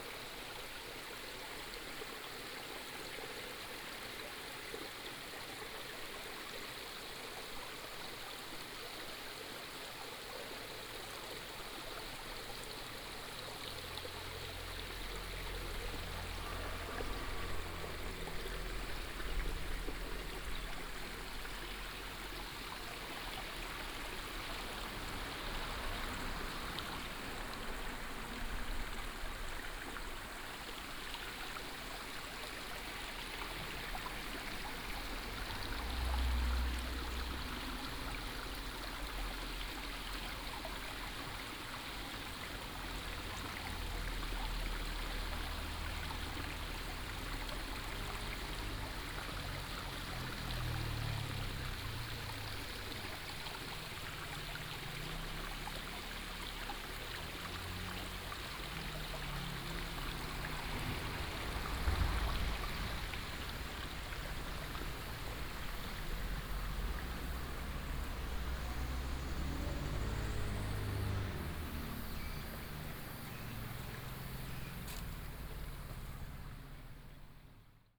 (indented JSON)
{
  "title": "石觀音坑, Gongguan Township - Small stream",
  "date": "2017-09-24 16:44:00",
  "description": "Small stream, traffic sound, Binaural recordings, Sony PCM D100+ Soundman OKM II",
  "latitude": "24.54",
  "longitude": "120.87",
  "altitude": "107",
  "timezone": "Asia/Taipei"
}